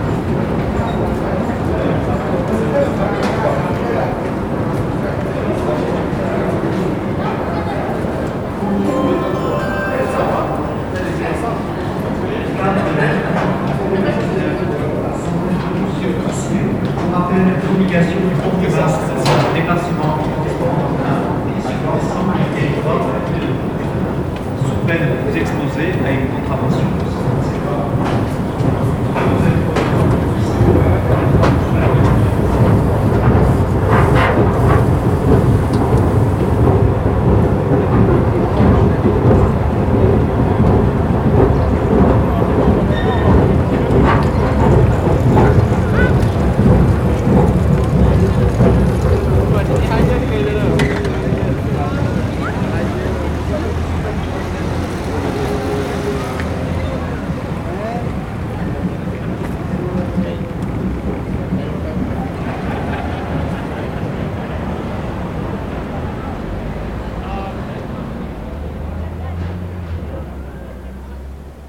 Allées Jean Jaurès, Toulouse, France - escalator noise
escalator noise, métal noise, footstep, voices
captation : Zoom H4n